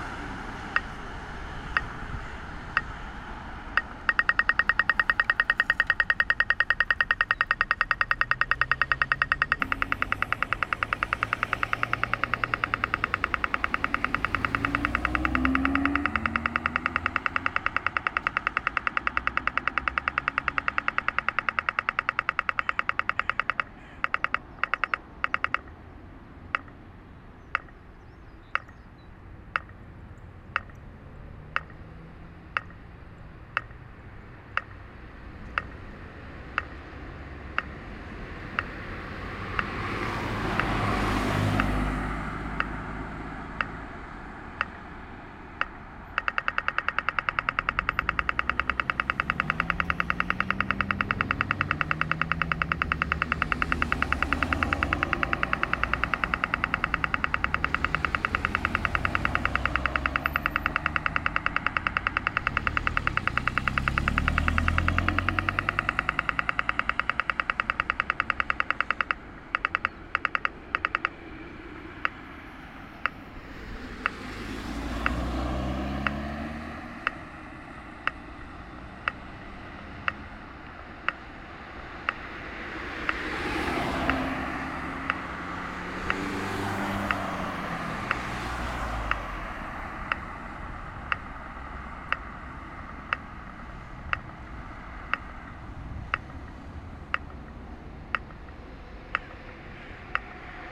Kijkduin, Laan van Meerdervoort, red light on a huge boulevard.
Den Haag, Netherlands, 30 March, 11:30